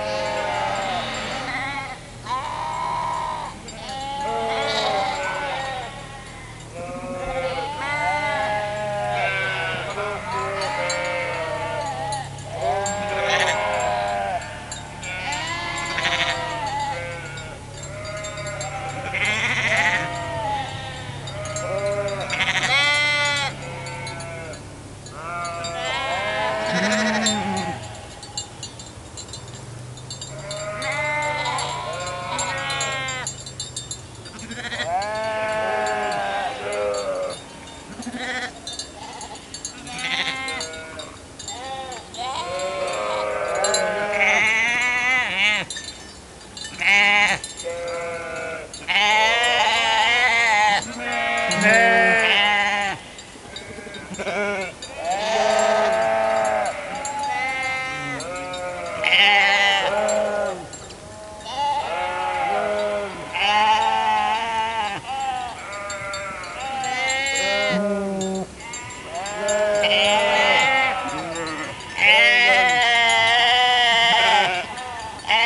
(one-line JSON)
{"title": "Unnamed Road, Isle of Bute, UK - Sheeps Lament at the Edge of St. Blanes Chapel", "date": "2018-07-16 15:45:00", "description": "Recorded with a pair of DPA4060s and a Tascam DR-100 MKIII", "latitude": "55.74", "longitude": "-5.03", "altitude": "60", "timezone": "Europe/London"}